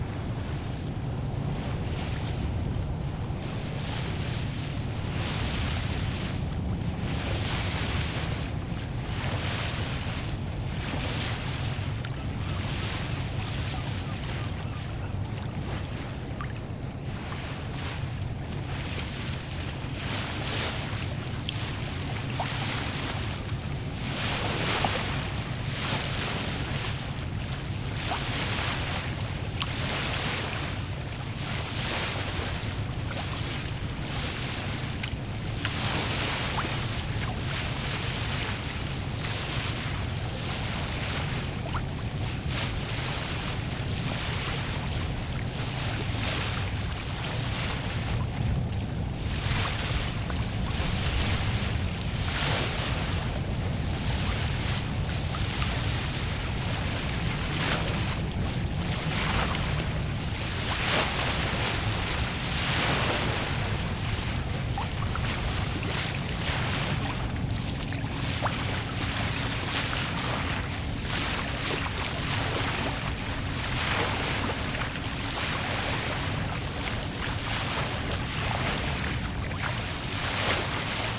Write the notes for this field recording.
Recorded on a Fostex FR-2LE Field Memory Recorder using a Audio Technica AT815ST and Rycote Softie on board the yacht "Carnival"